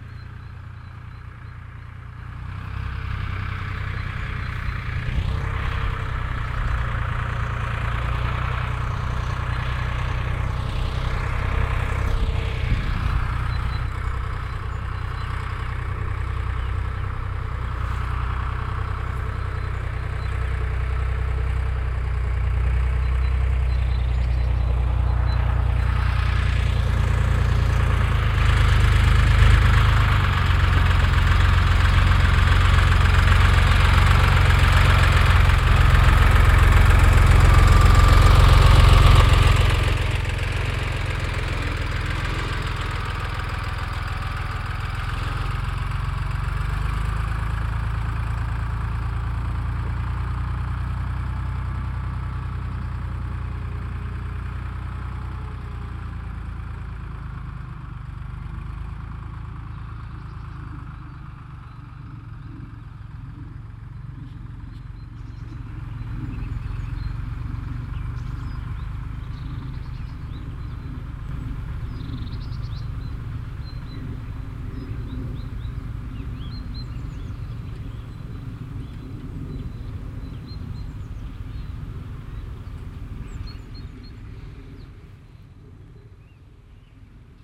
heiligenhaus, wiel, traktor
traktor des wieler bauern, nachmittags
soundmap: nrw
project: social ambiences/ listen to the people - in & outdoor nearfield recordings
wiel, bauernhof